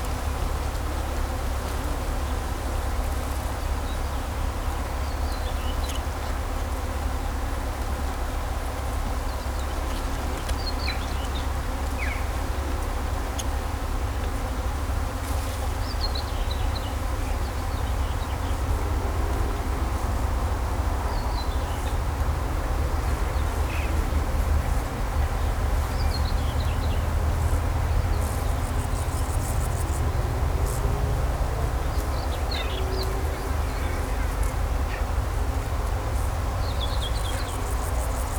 In den Reben, Kallstadt, Deutschland - In Kallstadt summen die Bienen
Natur, Weinreben, Bienen summen, Vögel singen, Fahrgeräusche von Straße, Land
Landkreis Bad Dürkheim, Rheinland-Pfalz, Deutschland